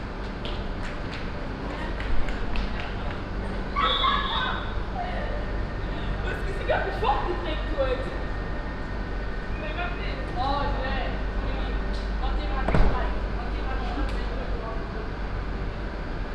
Garer Quartier, Lëtzebuerg, Luxemburg - luxemburg, main station, at the platform
At the platform no.1 of Luxemburg main station. The sounds of a train standing at the platform - engine running - passengers entering the train - a youth group at the platform joking around - the train departure
international city soundmap - topographic field recordings and social ambiences
25 June 2015, 19:30